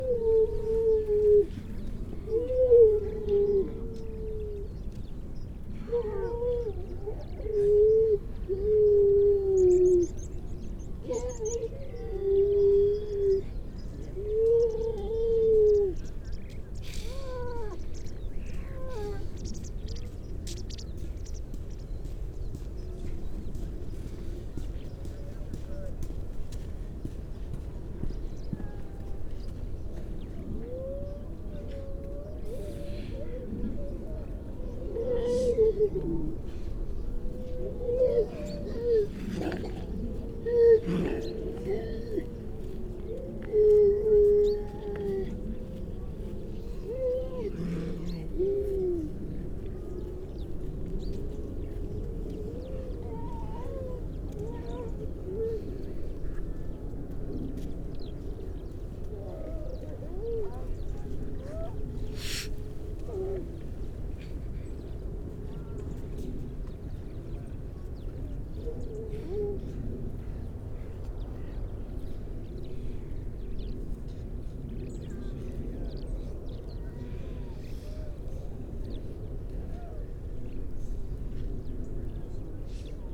Unnamed Road, Louth, UK - grey seals soundscape ...
grey seals soundscape ... mainly females and pups ... parabolic ... bird call from ... skylark ... dunnock ... mipit ... pied wagtail ... starling ... chaffinch robin ... crow ... all sorts of background noise ...